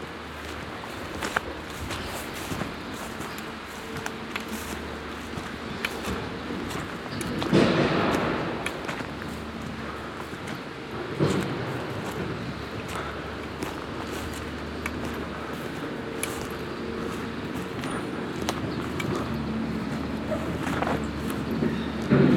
Sveio, Norwegen - Norway, Sveio, stone quarry
At a stone quarry. The sound of walking closer through steep grass and then the sound of a excavator moving stones in the valley like pot hole.
international sound scapes - topographic field recordings and social ambiences